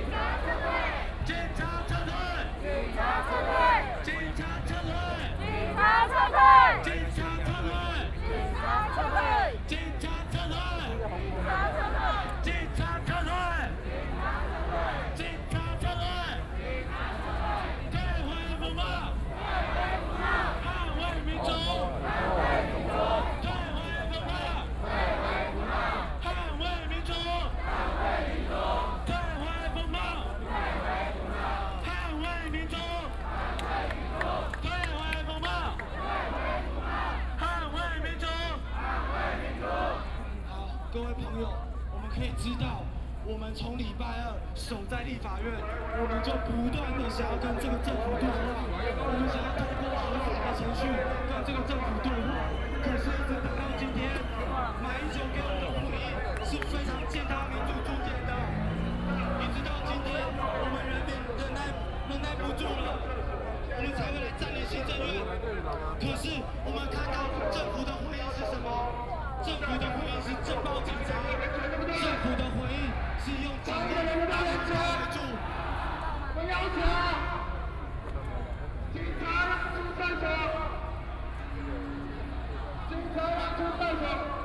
行政院, Taiwan - occupied the Executive Yuan
Student activism, Walking through the site in protest, People and students occupied the Executive Yuan